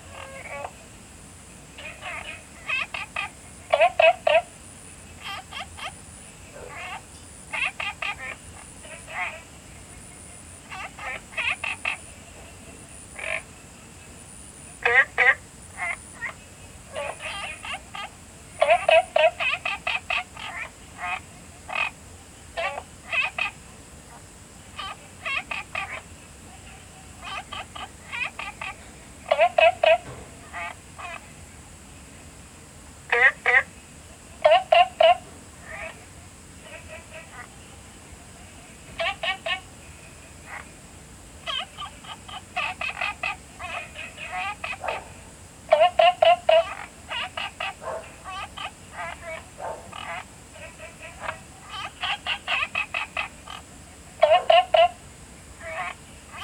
青蛙ㄚ婆ㄟ家, 桃米里, Taiwan - Frogs chirping
Frogs chirping, Small ecological pool
Zoom H2n MS+XY
Puli Township, 桃米巷11-3號, September 2015